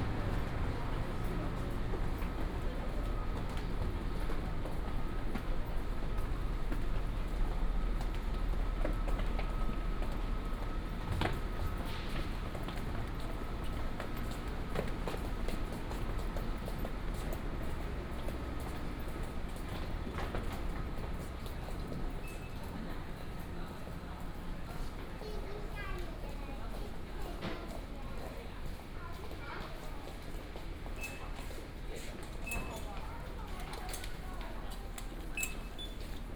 Liujia Station, 新竹縣竹北市 - Walk at the station
Walk at the station, From the station platform, To the hall, To export direction
Hsinchu County, Taiwan, 17 January